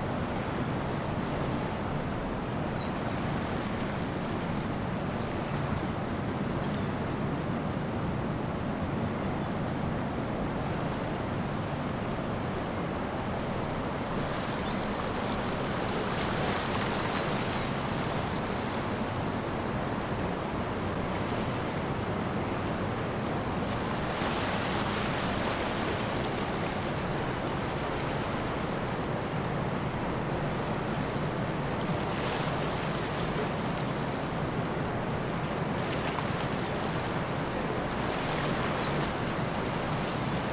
Niagara Pkwy, Niagara-on-the-Lake, ON, Canada - Tailrace of Sir Adam Beck II Generating Station
This recording was made with an H2n placed on the railing of a platform overlooking the tailrace of Sir Adam Beck II (SAB II) Generating Station in Niagara Falls, Ontario. We hear the hum of SAB II, the spill of water returned from the station to the Lower Niagara River, and the cry of gulls. SAB I and II have a combined generating capacity of about 2,123 megawatts – enough to power more than one million homes each year. The Niagara River Corridor is an Important Bird and Bird Diversity Area (IBA) frequented by at least 18 gull species. With thanks to Ontario Power Generation.
Golden Horseshoe, Ontario, Canada, 16 November 2020